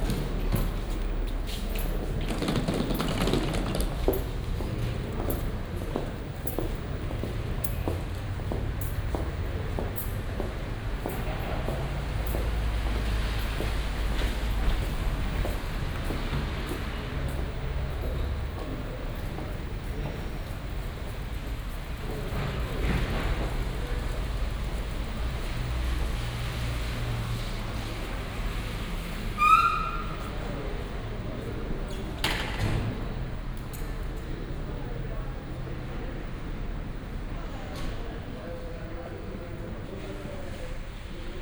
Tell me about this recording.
messina main station, entry hall ambience